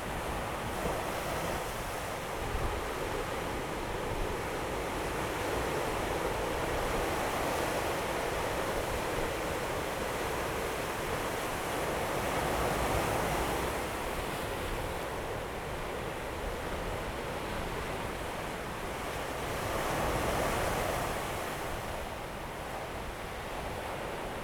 和平里, Chenggong Township - Sound of the waves
Sound of the waves, on the rocky shore, Very hot weather
Zoom H2n MS+ XY